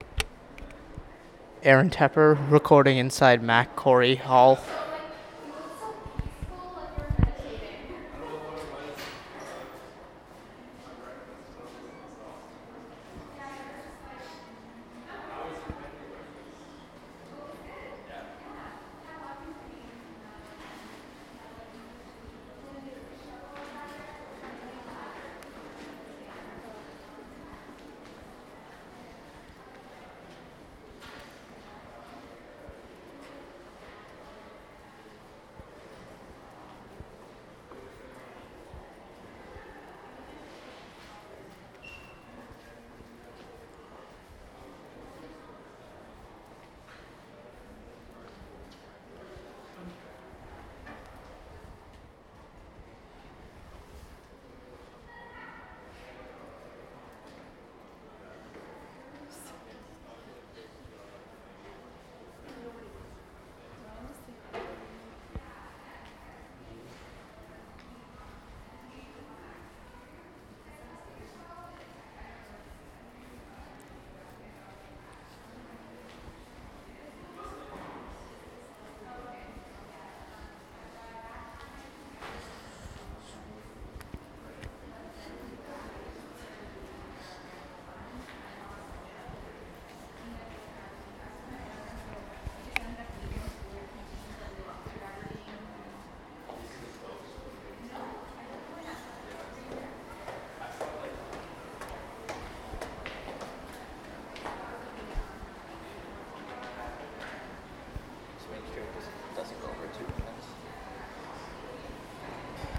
Mackintosh-Corry Hall, Kingston, ON, Canada - Mac-Corry Hall, Student Street
Please refer to the audio file for names of the location and the recordist. This soundscape recording is part of a project by members of Geography 101 at Queen’s University.
20 March 2018, 09:44